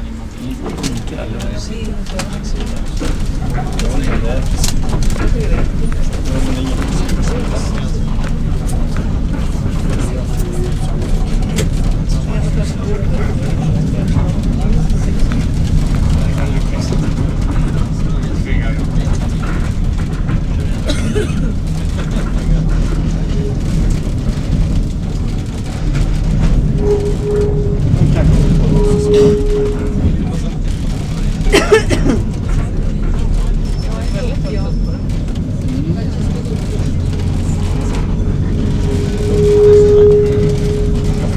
{
  "title": "Calçada da Glória, Lisbon - Elevator de Bica, a tram ride",
  "date": "2007-12-26 18:08:00",
  "description": "Going up to Bairro Alto.",
  "latitude": "38.72",
  "longitude": "-9.14",
  "altitude": "29",
  "timezone": "Europe/Lisbon"
}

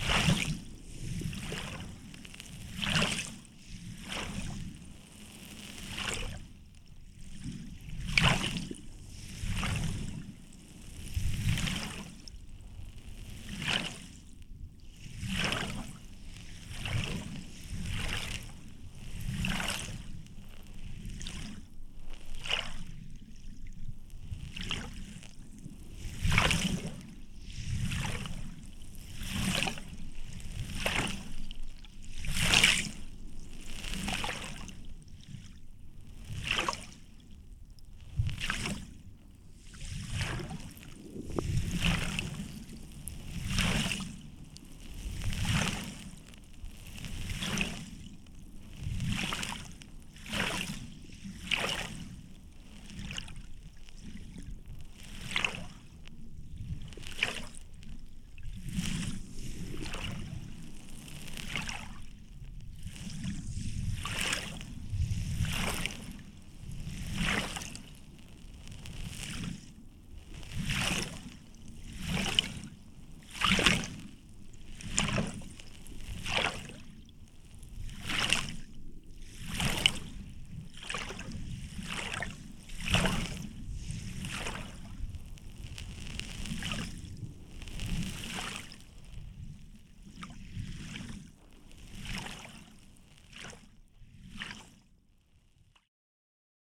{
  "title": "Rubikiai lake, Lithuania, shore sand",
  "date": "2021-08-14 14:40:00",
  "description": "windy day. stereo hydrophone and geophone in the sand of lakeshore",
  "latitude": "55.51",
  "longitude": "25.30",
  "altitude": "127",
  "timezone": "Europe/Vilnius"
}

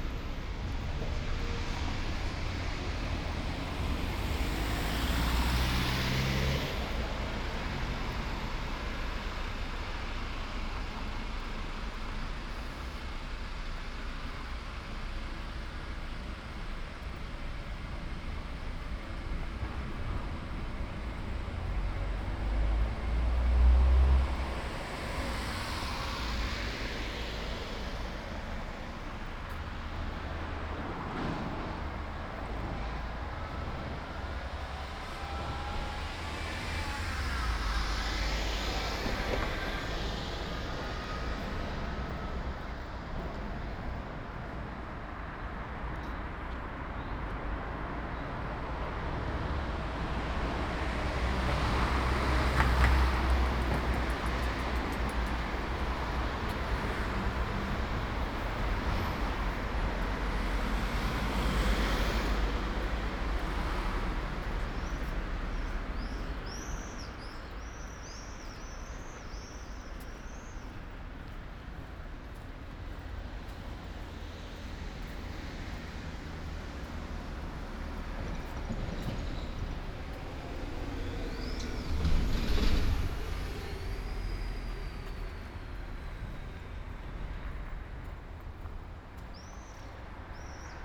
{
  "title": "Ascolto il tuo cuore, città. I listen to your heart, city. Several chapters **SCROLL DOWN FOR ALL RECORDINGS** - “Posting postcards, day 1 of phase 2, at the time of covid19” Soundwalk",
  "date": "2020-05-04 20:14:00",
  "description": "“Posting postcards, day 1 of phase 2, at the time of covid19” Soundwalk\nChapter LXVI of Ascolto il tuo cuore, città. I listen to your heart, city.\nMonday May 4th 2020. Walking to mailbox to post postcard, San Salvario district, fifty five days (but first day of Phase 2) of emergency disposition due to the epidemic of COVID19\nStart at 8:14 p.m. end at 8:34 A.m. duration of recording 20’39”\nThe entire path is associated with a synchronized GPS track recorded in the (kml, gpx, kmz) files downloadable here:",
  "latitude": "45.06",
  "longitude": "7.68",
  "altitude": "243",
  "timezone": "Europe/Rome"
}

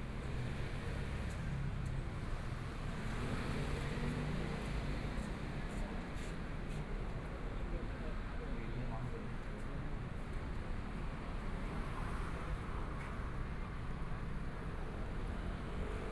{
  "title": "Jinzhou St., Zhongshan Dist. - In the Street",
  "date": "2014-02-06 12:49:00",
  "description": "Walking through the streets, Traffic Sound, Motorcycle sound, Various shops voices, Binaural recordings, Zoom H4n + Soundman OKM II",
  "latitude": "25.06",
  "longitude": "121.52",
  "timezone": "Asia/Taipei"
}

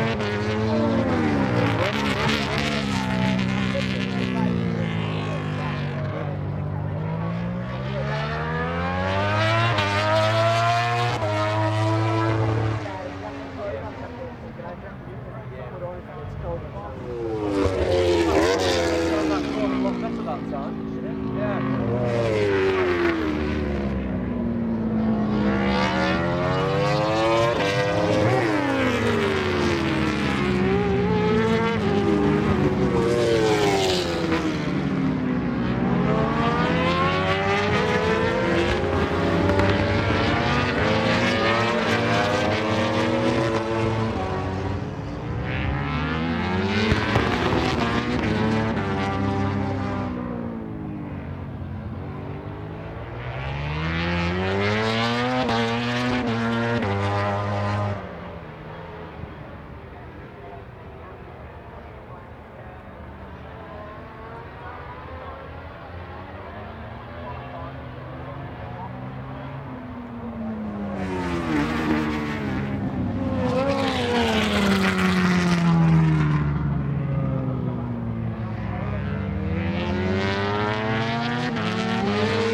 {
  "title": "Castle Donington, UK - British Motorcycle Grand Prix 2003 ... moto grand prix ...",
  "date": "2003-07-13 10:20:00",
  "description": "Free practice ... part two ... Melbourne Loop ... mixture 990cc four strokes an d500cc two strokes ...",
  "latitude": "52.83",
  "longitude": "-1.38",
  "altitude": "96",
  "timezone": "Europe/Berlin"
}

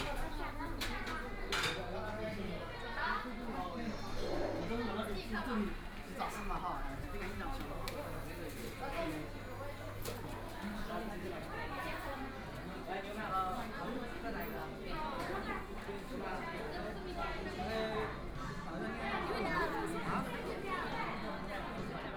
{
  "title": "Nanjin Road, Shanghai - Store shopping district",
  "date": "2013-11-30 20:06:00",
  "description": "walking in the Store shopping district, Walking through the streets of many tourists, Binaural recording, Zoom H6+ Soundman OKM II",
  "latitude": "31.24",
  "longitude": "121.48",
  "altitude": "10",
  "timezone": "Asia/Shanghai"
}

